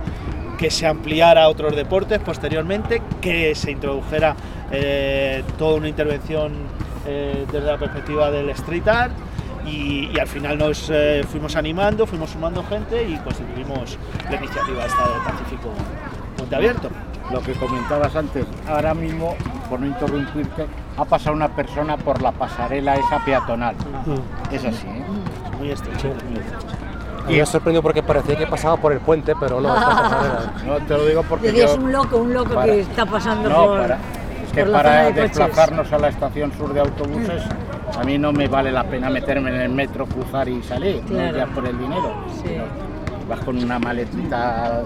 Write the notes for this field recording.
Pacífico Puente Abierto - Transecto - 11 - Calle Cocheras. La importancia de las canchas de baloncesto